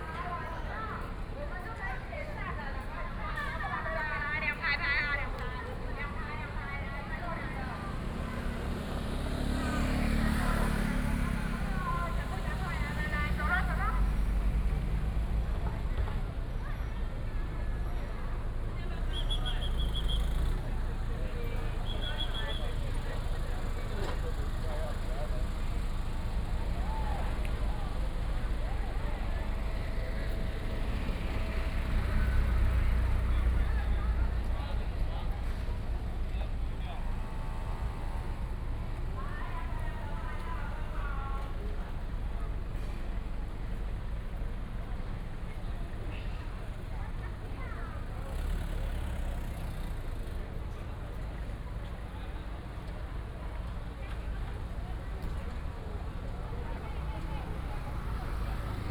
Hai'an Rd., Kaohsiung City - A lot of tourists
A lot of tourists, In the small square, High school tours, Hot weather, Birds